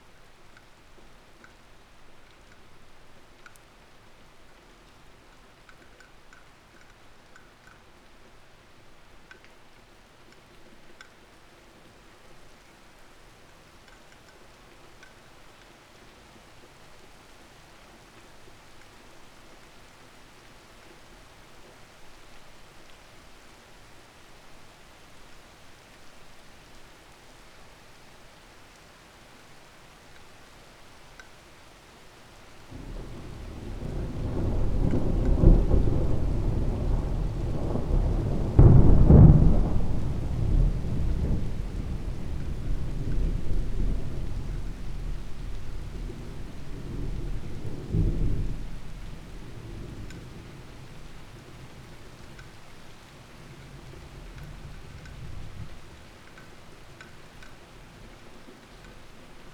ex Soviet military base, Vogelsang - inside building during thunderstorm
seeking shelter inside building, during thunderstorm
(SD702, MKH8020)